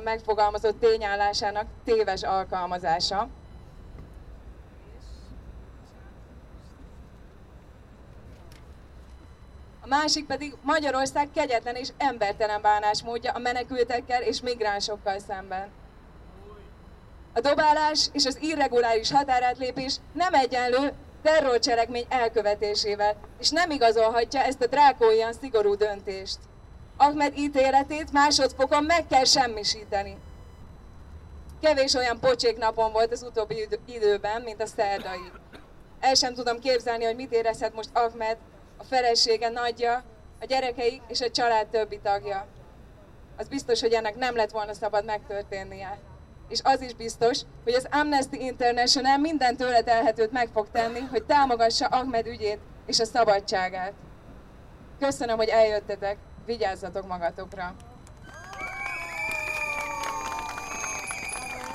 Demonstration for Ahmed, Budapest - Demonstration Speeches for Ahmed
There are three contributions on Hungarian and English: by the Migrant Solidarity Group of Hungary, by Amnesty International and by Arpad Shilling, a director from Budapest.